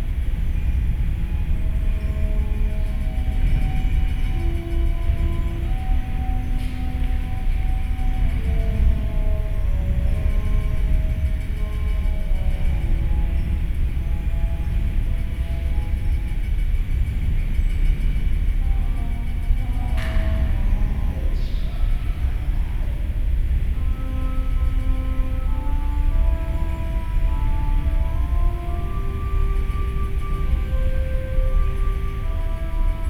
Strada Izvor, București, Romania - exhibtion ambience Causescu Palace
another ambience from the Center of Contemporary Art